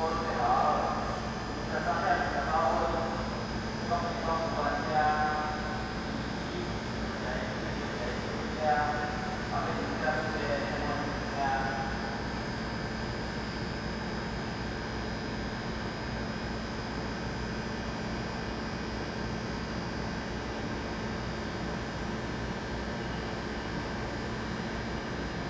Kaohsiung Station - Station broadcast messages
Standing beside the air conditioning cooling tank, at night, Station broadcast messages, Sony ECM-MS907, Sony Hi-MD MZ-RH1
高雄市 (Kaohsiung City), 中華民國, 29 March, ~23:00